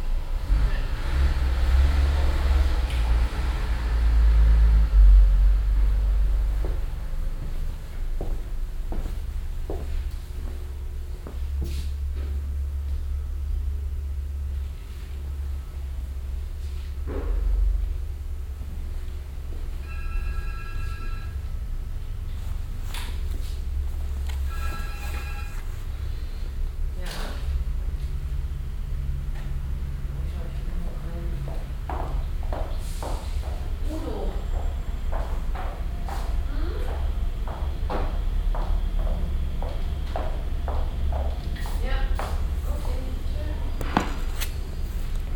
{"title": "cologne, mainzerstrasse-ubierring, buchhandlung", "date": "2008-09-02 17:28:00", "description": "mittags in der buchhandlucng, schritte auf steinboden, blättern von bücherseiten, gespräche des personals\nsoundmap nrw - social ambiences - sound in public spaces - in & outdoor nearfield recordings", "latitude": "50.92", "longitude": "6.96", "altitude": "52", "timezone": "Europe/Berlin"}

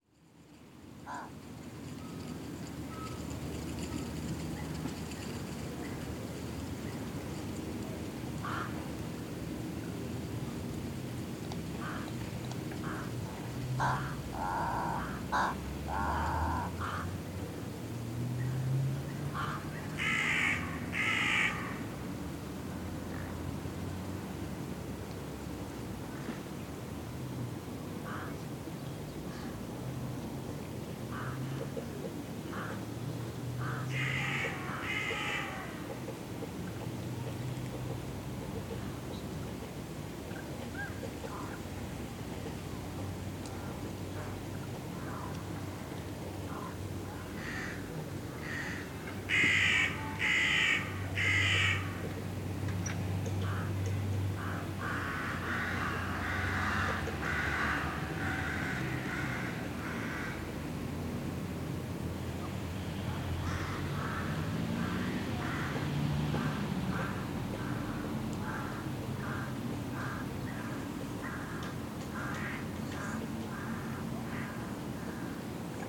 {"title": "Oberer Batterieweg beim Wasserturm, Basel-Bottmingen, Schweiz - Batterie Park", "date": "2001-01-01 11:30:00", "description": "excited craws, walkers, dogs and some background traffic on a sunday morning", "latitude": "47.53", "longitude": "7.59", "altitude": "368", "timezone": "Europe/Zurich"}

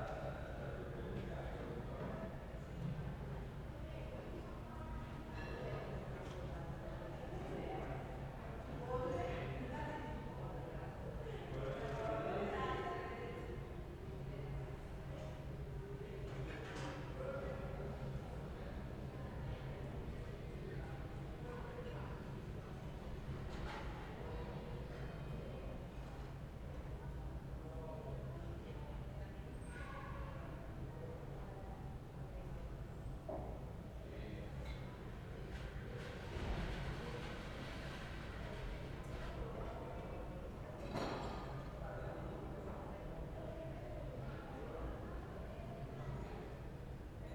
{"title": "Ascolto il tuo cuore, città. I listen to your heart, city. Several chapters **SCROLL DOWN FOR ALL RECORDINGS** - Sunday afternoon with passages of photo reliefs plane in the time of COVID19 Soundscape", "date": "2020-05-03 12:55:00", "description": "\"Sunday afternoon with passages of photo reliefs plane in the time of COVID19\" Soundscape\nChapter LXV of Ascolto il tuo cuore, città. I listen to your heart, city.\nSunday May 3rd 2020. Fixed position on an internal (East) terrace at San Salvario district Turin, ffity four days after emergency disposition due to the epidemic of COVID19.\nStart at 0:55 p.m. end at 2:09 p.m. duration of recording 01:14:32", "latitude": "45.06", "longitude": "7.69", "altitude": "245", "timezone": "Europe/Rome"}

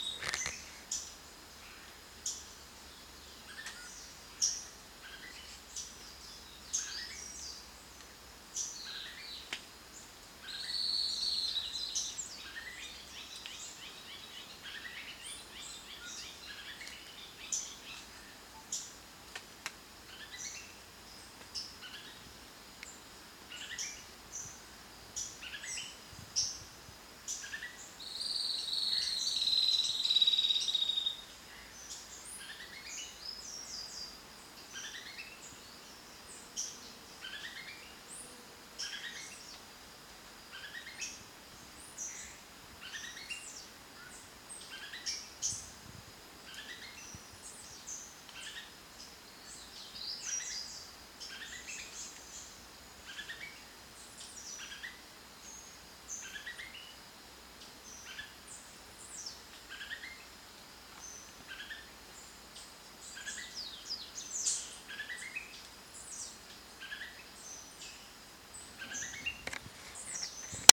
{"title": "Agrofloresta Córrego da Anta. São Sebastião da Grama - SP, Brasil - Sound of birds in the border of forest", "date": "2022-02-17 09:15:00", "description": "This sound was capturated by me during my dailing work in the agroforest Córrego da Anta while I walk to see the coffee plantation. We are in the latest weeks of summer and the nature is preparing to enter the autumm.", "latitude": "-21.74", "longitude": "-46.69", "altitude": "1215", "timezone": "America/Sao_Paulo"}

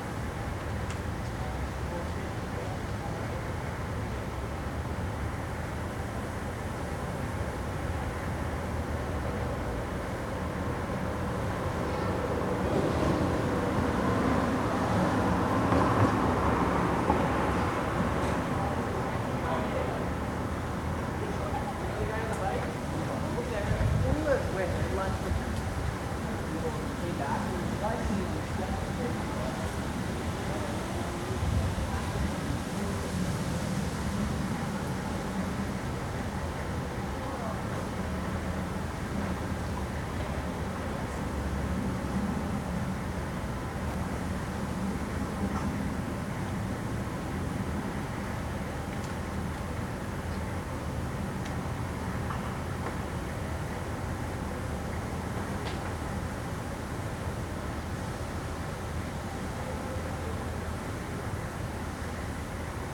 {"title": "Montreal: ave de l`Esplanade (4600 block) - ave de l`Esplanade (4600 block)", "date": "2008-08-08 15:00:00", "description": "equipment used: Sony Minidisc, Sony stereo mic\nApologies for the wind noise... Still it is an insteresting soundmark.", "latitude": "45.52", "longitude": "-73.59", "altitude": "75", "timezone": "America/Montreal"}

